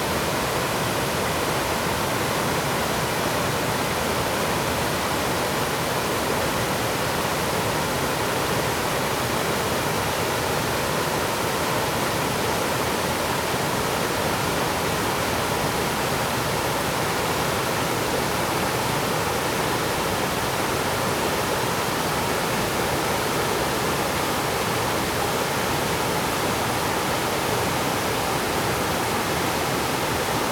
南山溪, 仁愛鄉南豐村, Nantou County - Streams and waterfalls
Waterfalls facing far away
Zoom H2n MS+XY +Sptial Audio
Nantou County, Taiwan, 13 December 2016